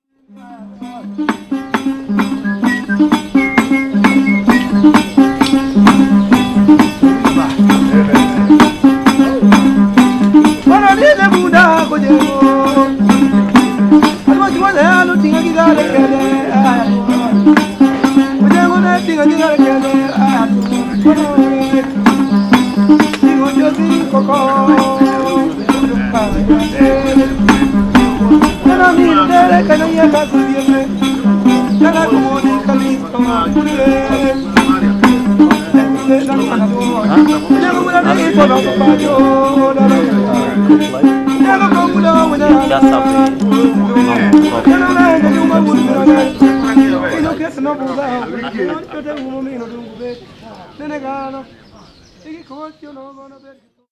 Yusef Ugutu plays the netiti at Kisumu Airport. Kenya, Kisumu, netiti, Ugutu, Siaya, Africa, Kenya
Kisumu, Kenya